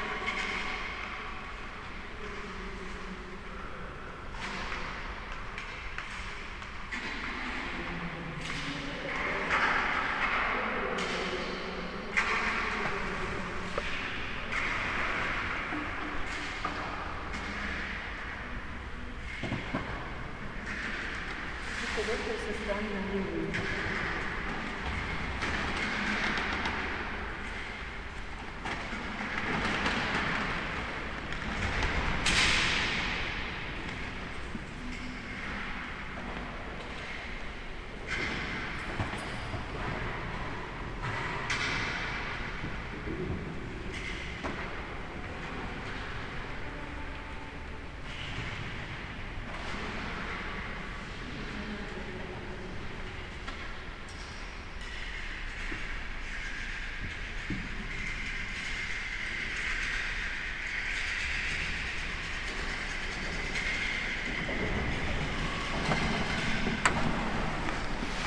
inside of the kathedral, after the celebration
9 June 2010